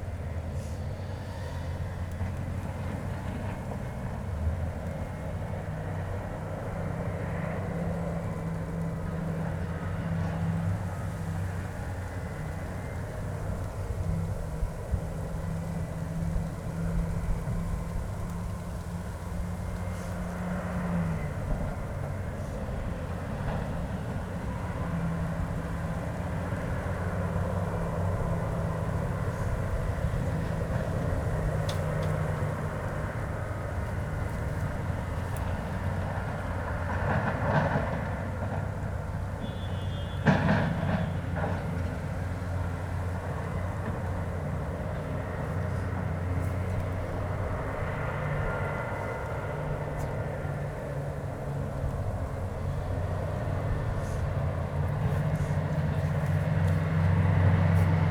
Beselich Niedertiefenbach - at the edge of a limestone quarry
ambience near limestone quarry (impatient little girl waiting for the recordist to finish)
(Sony PCM D50, DPA4060)
Germany, 28 October, 11:55